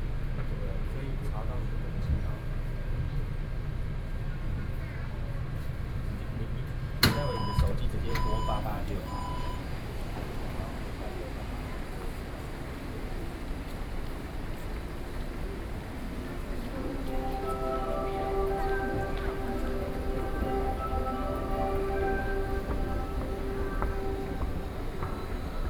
Zhonghe-Xinlu Line, Taipei City - Zhonghe-Xinlu Line
from Guting Station to Dingxi Station, Sony PCM D50 + Soundman OKM II